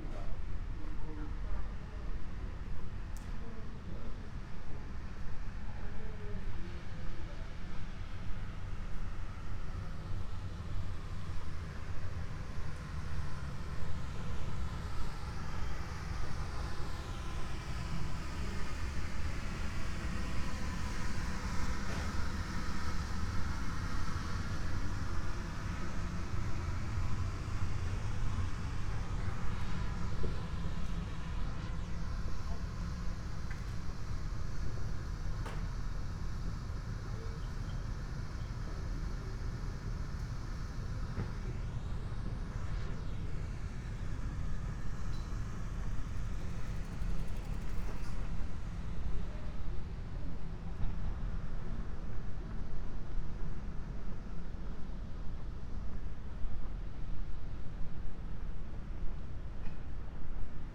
chome asakusa, tokyo - evening streets
quiet streets, bicycles, cars, sounds from behind the windows and doors
Taito, Tokyo, Japan